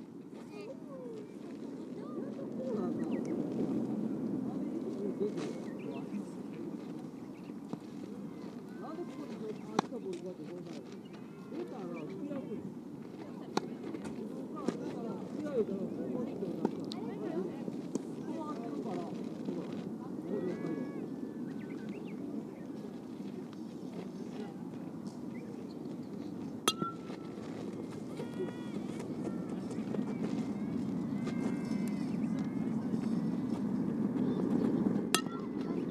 Deba, Ritto, Shiga, Japan - Pee Wee Baseball Practice
Little boys are doing batting practice. We can hear the pig of a metallic bat striking balls that the coach pitches gently. Trains and car traffic can be heard in the background.
13 April 2014, ~16:00